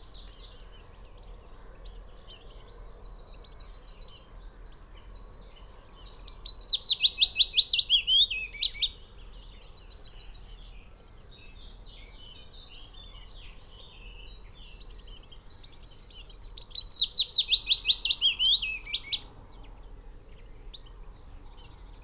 Green Ln, Malton, UK - willow warbler soundscape ...
willow warbler soundscape ... xlr sass on tripod to zoom h5 ... bird song ... calls ... from ... blackcap ... yellowhammer ... skylark ... blackbird ... goldfinch ... pheasant ... red-legged partridge ... wren, ... crow ... chaffinch ... dunnock ... whitethroat ... blue tit ... wood pigeon ... linnet ... unattended time edited ... extended recording ...
Yorkshire and the Humber, England, United Kingdom, 8 May 2022, 05:40